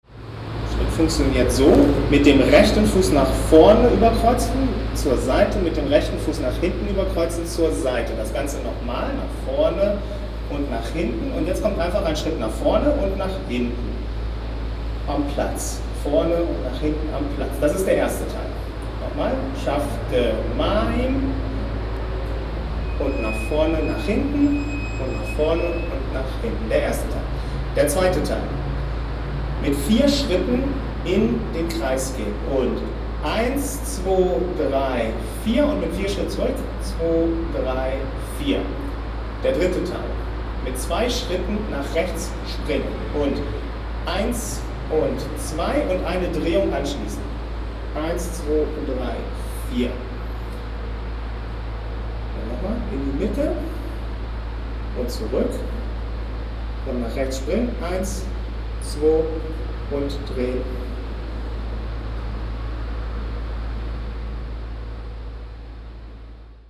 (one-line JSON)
{"title": "essen, old synagogue, installation", "date": "2011-06-08 22:59:00", "description": "On the first floor of the synagogue you can find a video installation that shows and describes traditional dances - her the sound of a speaker describing the movements that you can follow while watching.\nProjekt - Stadtklang//: Hörorte - topographic field recordings and social ambiences", "latitude": "51.46", "longitude": "7.02", "altitude": "76", "timezone": "Europe/Berlin"}